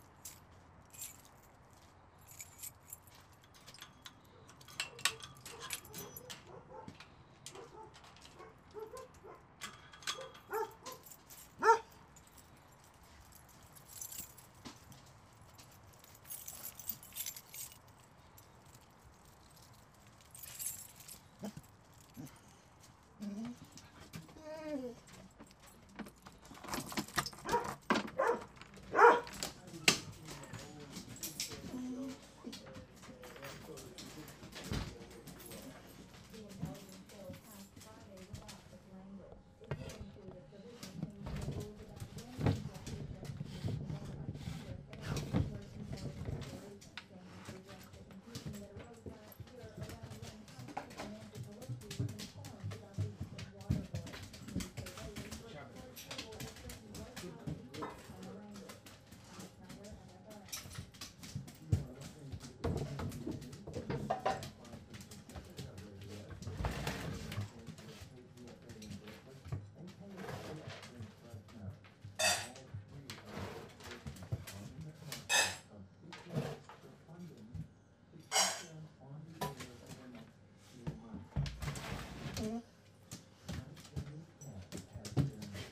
feeding the dogs while listening yet another pledge for your money from
evolutionary radio KPFA from Berkeley